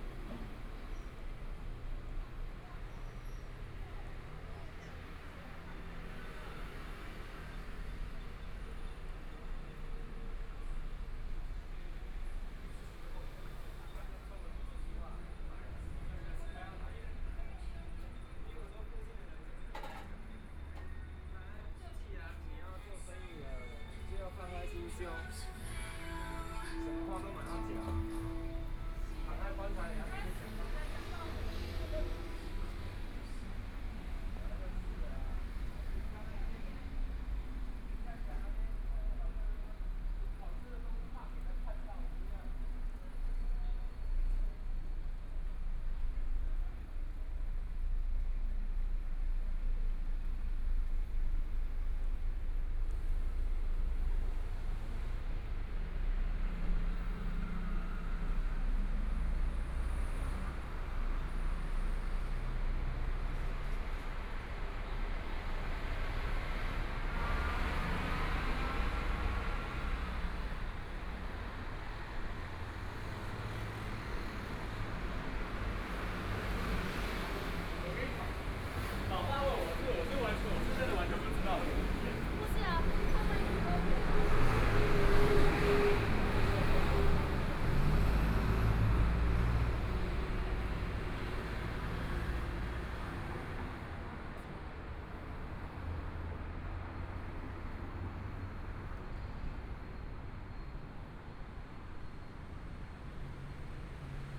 Sec., Chang'an E. Rd., Taipei - walking
walking on the Road, Traffic Sound, Motorcycle Sound, Pedestrians on the road, Binaural recordings, Zoom H4n+ Soundman OKM II
Taipei City, Taiwan, 8 February 2014, ~15:00